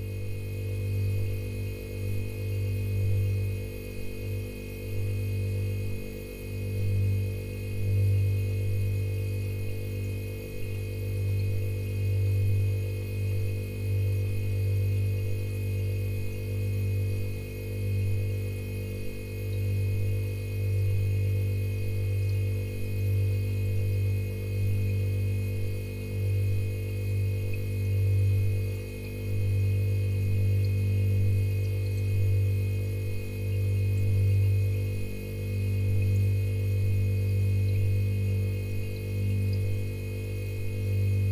Poznan, Windy Hill district, kitchen - refrigerator
i placed the recorder behind the fridge. nice layers of sounds, different ringing sounds, cracks of the casing, drops and flow of the cooling gas, and wonderful low end drone
Poznań, Poland, 17 April 2012, 22:45